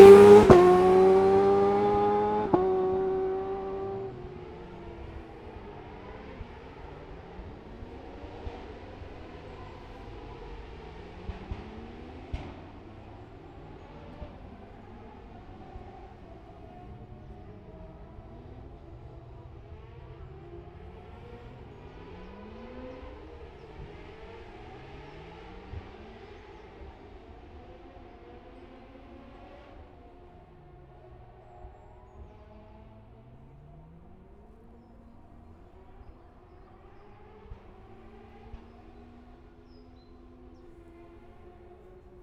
Scarborough, UK - motorcycle road racing 2012 ...
600cc qualifying ... Ian Watson Spring Cup ... Olivers Mount ... Scarborough ... open lavalier mics either side of a furry covered table tennis bat used as a baffle ... grey breezy day ... initially a bit loud ... with chiffchaff bird song ...
15 April 2012, ~10:00